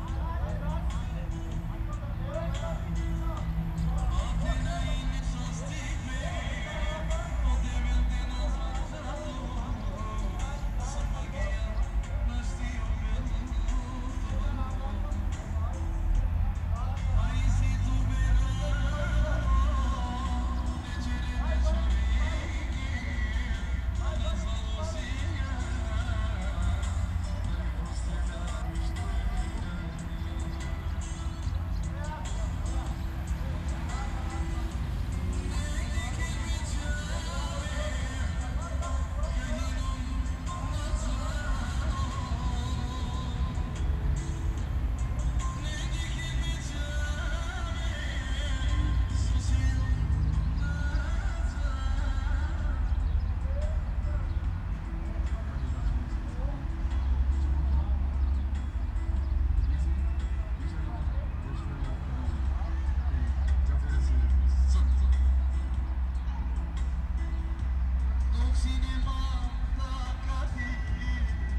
Maribor, Sokolska station - music in backyard
at Sokolska station, drone of heavy traffic, distorted balkan sounds from a backyard garden, where agroup of people gathered around a little shack.
(SD702 DPA4060)
Maribor, Slovenia, 30 May 2012